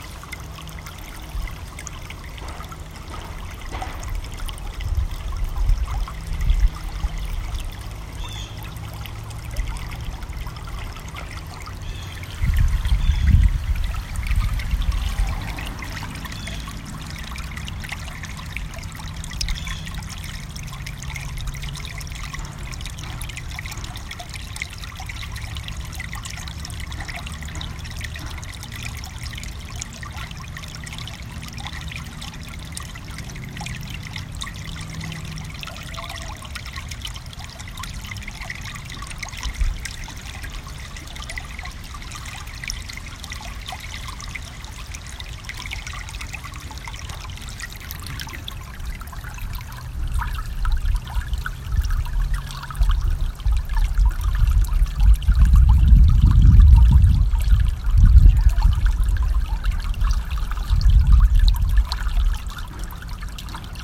Sheridan Ave, Iowa City, IA, USA Longellow Trailhead, Ralston Creek - A Gurgling Eddy of Ralston Creek, Iow City, IA
This is a brief recording of the Ralston Creek, which cuts through Iowa City. This is near the Longfellow Trailhead off of Grant Street and Sheridan Avenues. Notice the faint sounds of hammering in the distance to the east. I recorded about a foot above the the eddy using a Tascam DR100 MKiii. This recording was captured on a crisp, Indian Summer day with a slight westerly breeze.